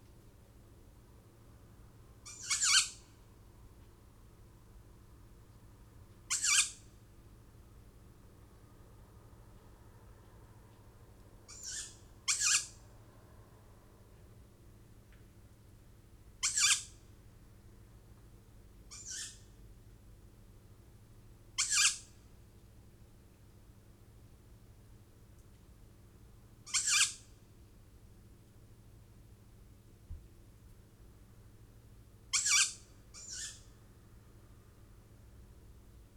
Off Main Street, Helperthorpe, Malton, UK - tawny owl fledglings ...
tawny owl fledglings ... two birds ... dpa 4060s in parabolic to SD 702 ...
England, United Kingdom